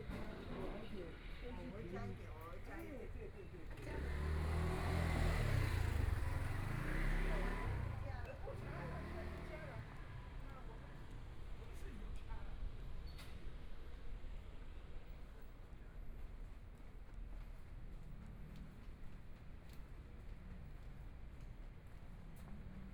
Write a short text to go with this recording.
Walking along the MRT route, Walking in the streets, Traffic Sound, Motorcycle sound, Binaural recordings, Zoom H4n+ Soundman OKM II